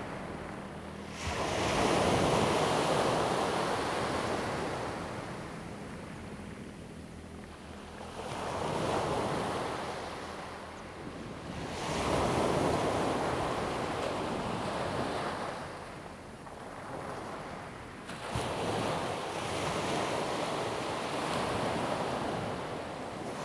At the beach, Sound of the waves
Zoom H2n MS +XY